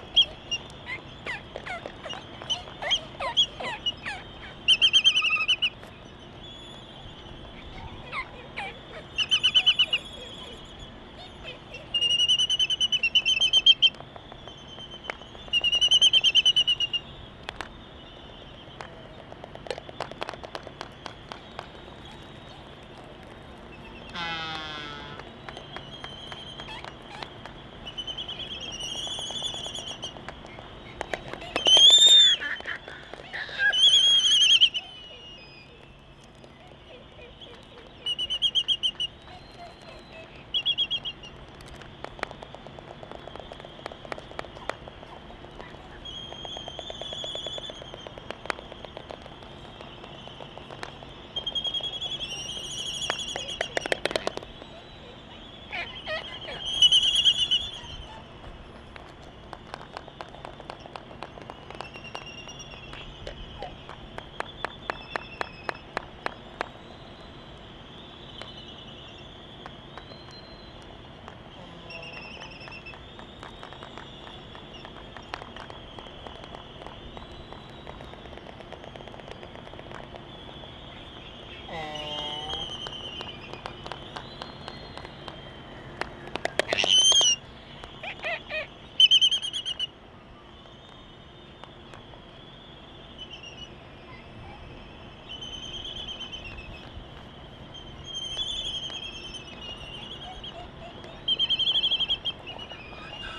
United States Minor Outlying Islands - Laysan albatross dancing ...
Laysan albatross dancing ... Sand Island ... Midway Atoll ... calls and bill clapperings ... open Sony ECM 595 one point stereo mic to Sony Minidisk ... warm ... sunny ... blustery morning ...
December 1997